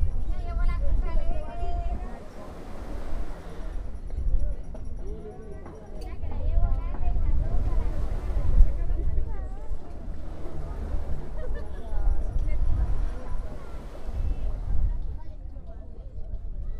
Taganga, Playa Grande. Carmen vendedora de cocadas
Carmen vende cocadas dulces hechos con coco, papaya, tamarindo y panela... así los promociona en la playa grande
Magdalena, Colombia